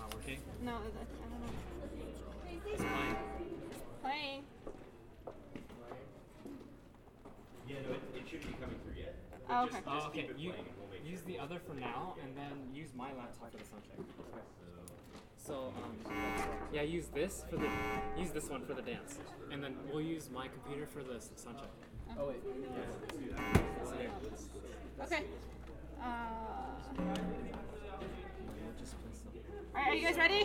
University of Colorado Boulder, UMC Glennmiller Ballroom - VSA Tet Show Rehearsal